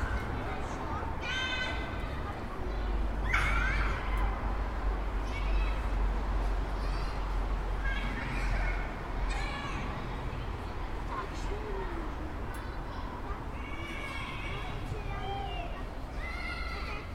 Na Slupi, children play ground
Children playing in the snow at the playground leisure time center opposite to the hospital of Saint Alzbeta.